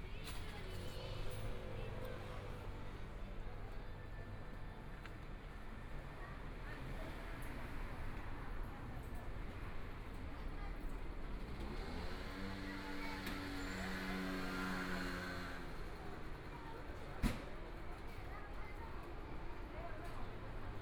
{"title": "Shuangcheng St., Taipei City - walking in the Street", "date": "2014-02-10 15:34:00", "description": "walking In the Street, Traffic Sound, Motorcycle Sound, Pedestrian, Construction site sounds, Clammy cloudy, Binaural recordings, Zoom H4n+ Soundman OKM II", "latitude": "25.07", "longitude": "121.52", "timezone": "Asia/Taipei"}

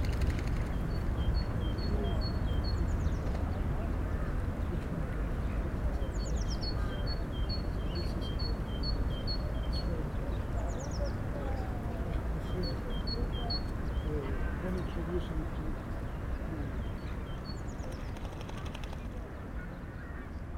{"title": "København, Denmark - Lake ambiance and distant bells", "date": "2019-04-15 18:00:00", "description": "Sounds of the birds swimming : Eurasian Coot, Common Moorhen, Greater White-fronted Goose. At the backyard, the bells ringing 6PM. Pleasant distant sound with the lake ambiance.", "latitude": "55.67", "longitude": "12.60", "altitude": "1", "timezone": "Europe/Copenhagen"}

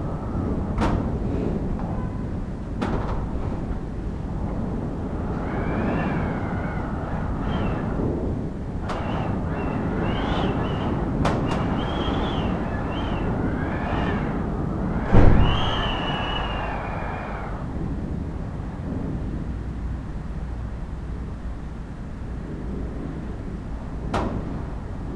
{"title": "Lihuania, Utena, under the roof", "date": "2011-02-08 18:00:00", "description": "inside local cultural centre, windy day and sounds under the roof", "latitude": "55.51", "longitude": "25.60", "altitude": "110", "timezone": "Europe/Vilnius"}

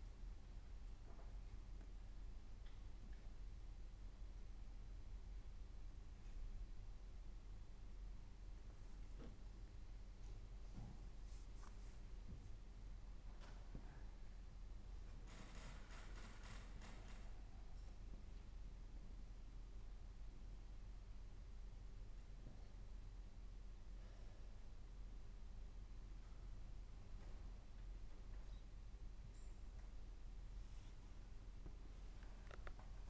{"title": "bonn, frongasse, theaterimballsaal, bühnenmusik killer loop - bonn, frongasse, theaterimballsaal, bühnenschuss", "description": "soundmap nrw - social ambiences - sound in public spaces - in & outdoor nearfield recordings", "latitude": "50.73", "longitude": "7.07", "altitude": "68", "timezone": "GMT+1"}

